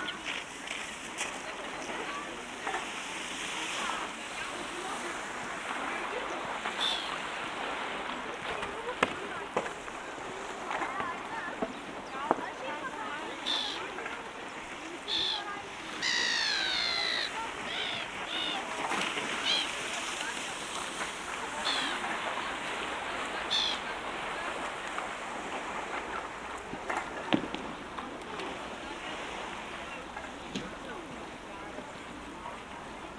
Zarasai, Lithuania, on a beach
evening on a beach in Zarasas lake. sennheiser ambeo smart headset recording